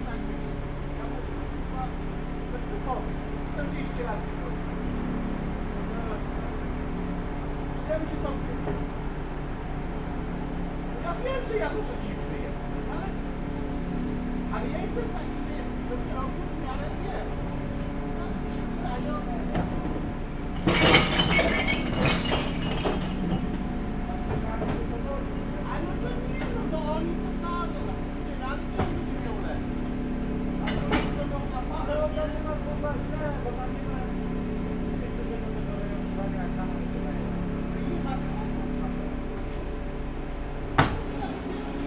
Dekerta, Kraków, Poland - (814 XY) Glass garbage service
Stereo recording of a service collecting glass garbage.
Recorded with Rode NT4 on Sound Devices Mix-Pre6 II.